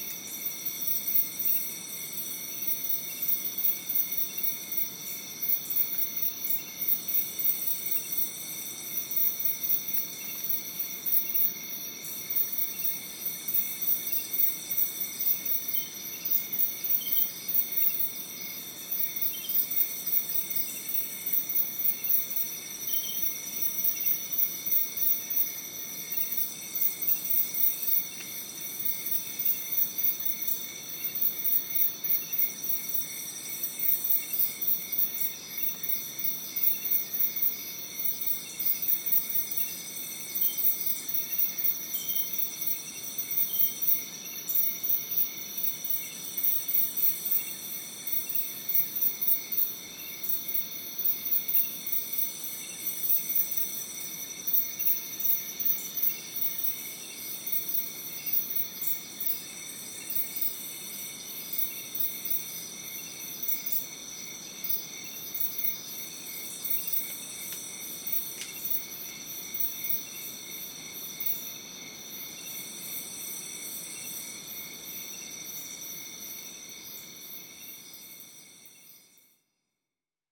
recorded at Iracambi, a NGO dedicated to protect and regrow the Atlantic Forest
Muriaé - MG, Brazil, 28 July 2017